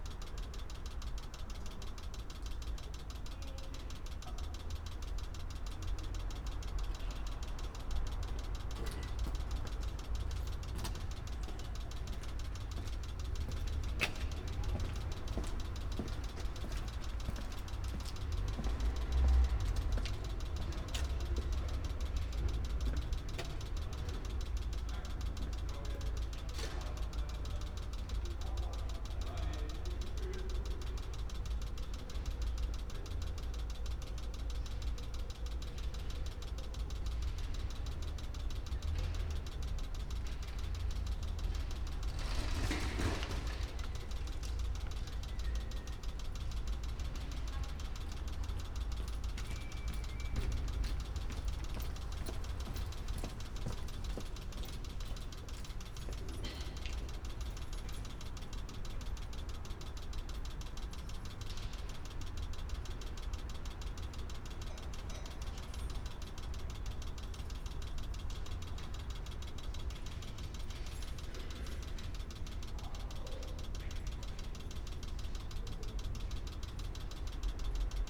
berlin: sanderstraße - the city, the country & me: broken lamp
flickering light of a broken lamp
the city, the country & me: february 27, 2014
Berlin, Germany, 27 February 2014